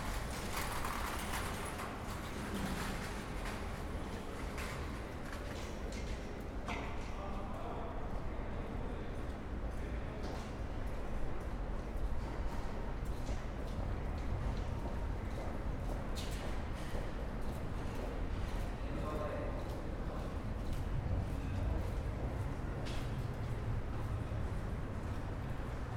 Weesperstraat, Amsterdam, Netherlands - Small Tunnel for bikers and pedestrians near subway entrance
Binaural format with two DPA 4061. Distant buzz coming from overhead traffic and metro tube below. Passing bikers and pedestrians. reflective space.